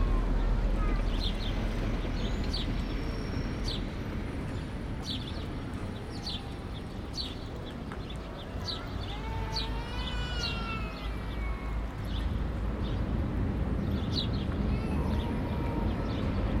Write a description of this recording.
*Listen with headphones for best acoustic results. A busy atmosphere with regular traffic of all kinds and bird life. New textures are formed as wheels ride on cobble stones on the main transit road. The space colors low frequencies and can be reverberant with time. Major city arrivals and transits take place here. Stereo field is vivid and easily distinguishable. Recording and monitoring gear: Zoom F4 Field Recorder, LOM MikroUsi Pro, Beyerdynamic DT 770 PRO/ DT 1990 PRO.